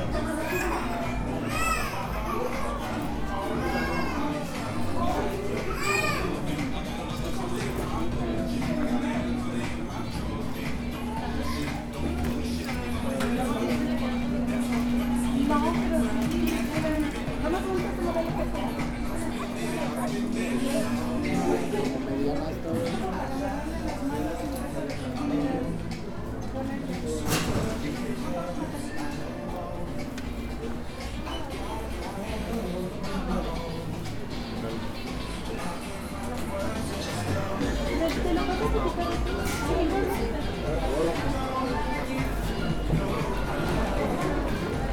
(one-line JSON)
{"title": "Blvd. Juan Alonso de Torres Pte., Valle del Campestre, León, Gto., Mexico - Centro comercial plaza mayor diciembre 2019.", "date": "2019-12-19 20:16:00", "description": "Plaza Mayor shopping center in December 2019.\nFrom the parking lot, through several aisles, in some stores and back to the car.\nI made this recording on December 19th, 2019, at 8:16 p.m.\nI used a Tascam DR-05X with its built-in microphones and a Tascam WS-11 windshield.\nOriginal Recording:\nType: Stereo\nCentro comercial plaza mayor diciembre 2019.\nDesde el estacionamiento, pasando por varios pasillos, en algunas tiendas y de regreso al coche.\nEsta grabación la hice el 19 de diciembre 2019 a las 20:16 horas.", "latitude": "21.16", "longitude": "-101.70", "altitude": "1830", "timezone": "America/Mexico_City"}